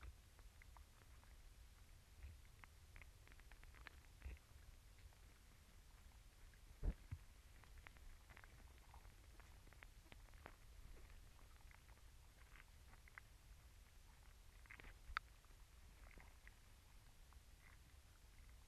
Crabs in Salt Marshes
hydrophone recording of crabs in small body of water